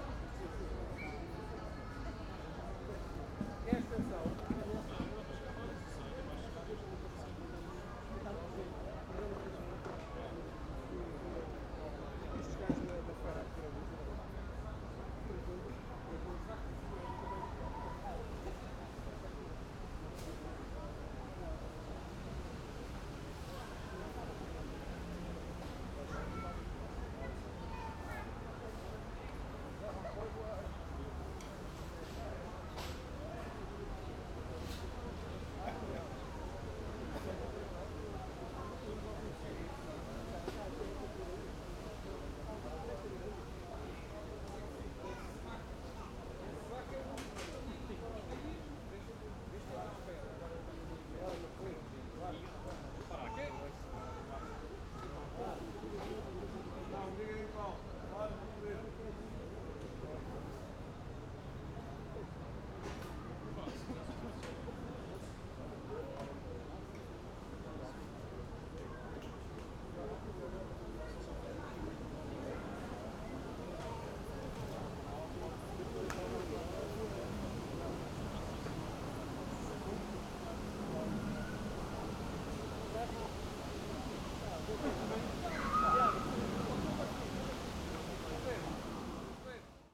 lisbon, jardim da estrela - park ambience
park ambience, nice summer evening.